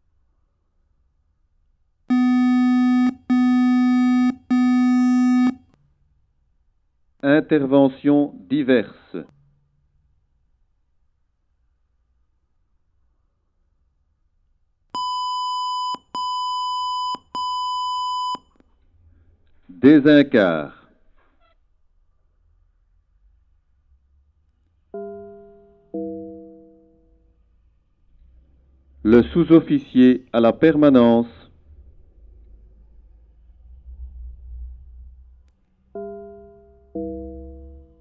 Wavre, Belgium - Fire brigade alarm
In a very few time, the fire brigade alarms will disappear. Firemen will use an automatic system, called 'be alert', comparable to a phone they will wear everyday. A fireman called me a few weeks ago in aim to record the alarm, before the disappearance. I was stunned. In fact I put my recorder to a very high compression level, because I was waiting for a very loud siren. And... it's only a small speaker saying : hey, wake up guys, wake up ! Nothing loud. The alarm is preceded by a code (like a small song), and a voice says in french consequence of the problem : chimney fire, extrication, etc. This is recorded in the fire brigade room, where firemen sleep. In a few time this song will be the past.
19 April 2018, ~17:00